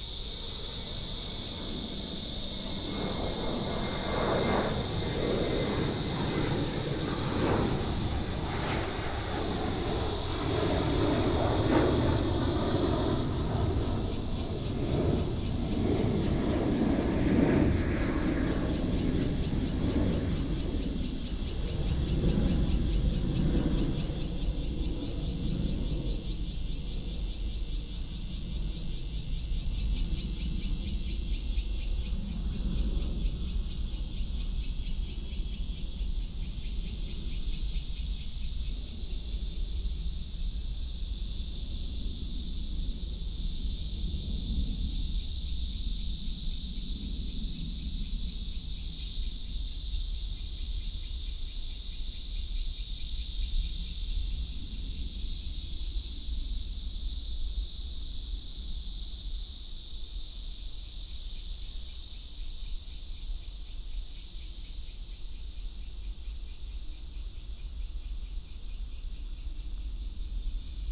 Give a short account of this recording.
Near the airport, traffic sound, Cicada cry, MRT train passes, The plane took off